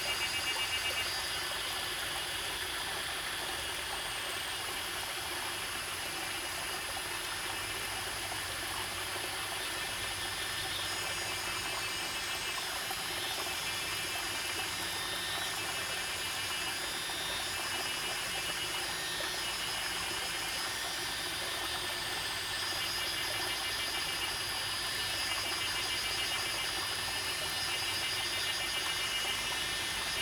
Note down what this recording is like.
Cicada sounds, Sound of water, Zoom H2n MS+XY